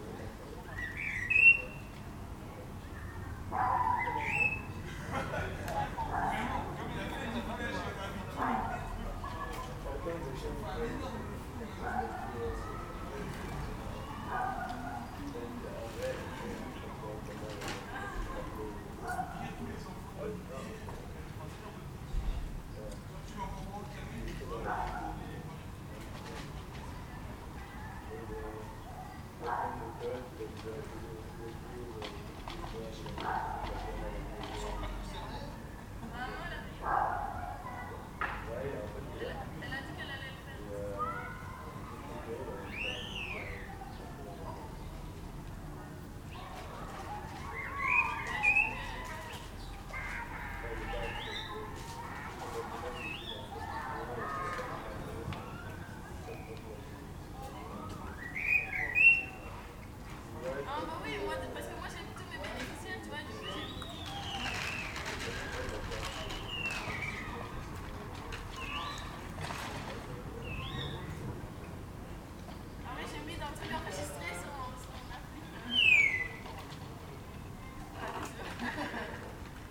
Ottignies-Louvain-la-Neuve, Belgique - Common Starling song
The very soothing sound of my home from the balcony. At the backyard, children playing, neighbors doing a barbecue. In the gardens, two Common Starling discussing and singing. This bird is exceptional and vocalize very much. In aim to protect the territory, the bird imitates Common Buzzard hunting, European Green Woodpecker distress shout, Blackbird anxiety shout. Also, they imitate Canada Goose, because there's a lot of these birds on the nearby Louvain-La-Neuve lake. In aim to communicate, the bird produce some strange bursts of creaks. The contact shout, when birds are far each other, is a repetitive very harsh shrill sound.
These birds are not here every day. They especially like to eat rotten fruits during autumn. It's a real pleasure when they are at home.
Ottignies-Louvain-la-Neuve, Belgium, 30 September 2018, 12:37